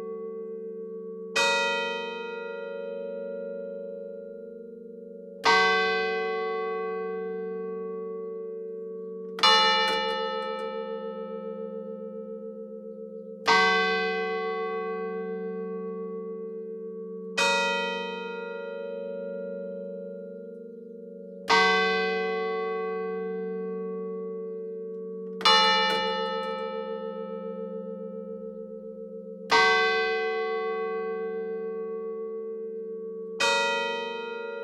La Ferté Vidam (Eure-et-Loir)
Église St-Nicolas
le Glas